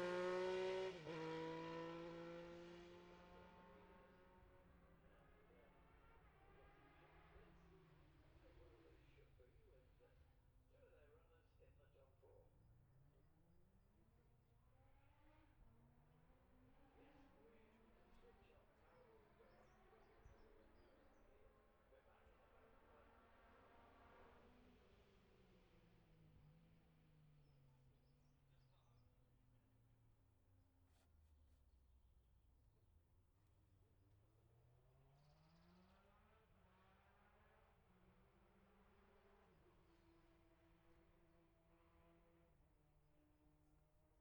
Jacksons Ln, Scarborough, UK - olivers mount road racing ... 2021 ...
bob smith spring cup ... classic superbikes qualifying ... dpa 4060s to MixPre3 ...